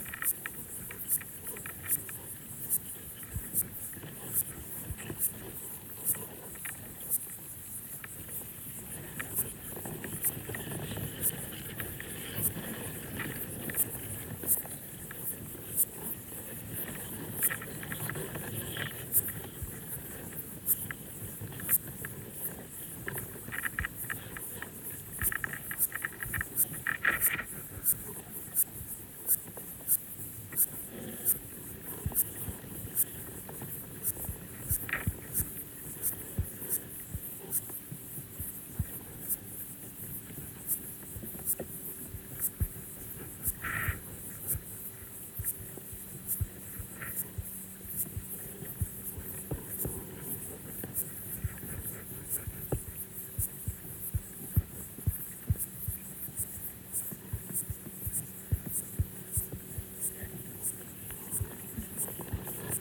Zarasai, Lithuania, lake Zarasas underwater
Hydrophone listening in lake Zarasas.
Zarasų rajono savivaldybė, Utenos apskritis, Lietuva